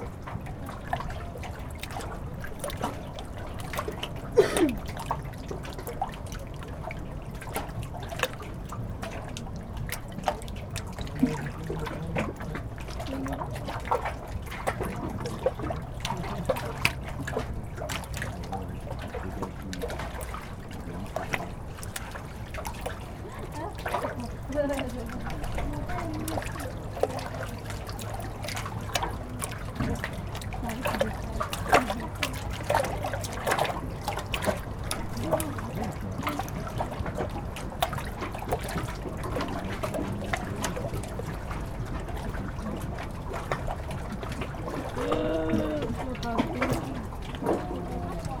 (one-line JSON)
{"title": "Overijse, Belgique - Dinghies", "date": "2016-09-04 16:55:00", "description": "In a strong wind, there's waves on the lake. We are in the yacht club, near small boats called dinghies. Two children wait to board.", "latitude": "50.73", "longitude": "4.52", "timezone": "Europe/Brussels"}